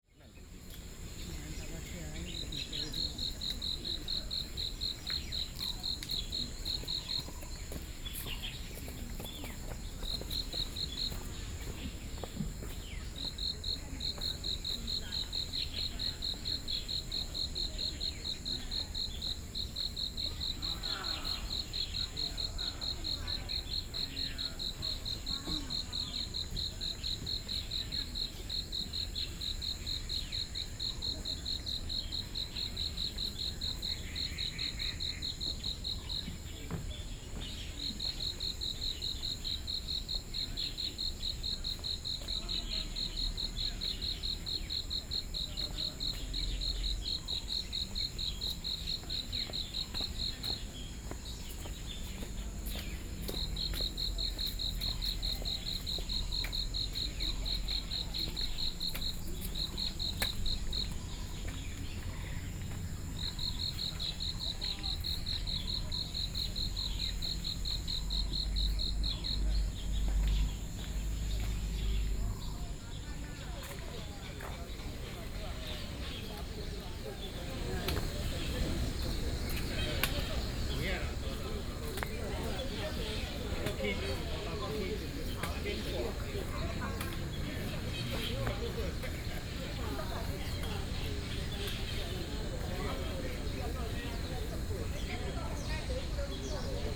金龍湖, Xizhi Dist., New Taipei City - at the lakes
Bird calls, Morning at the lakes, Insect sounds, Aircraft flying through, Many elderly people
Binaural recordings, Sony PCM D50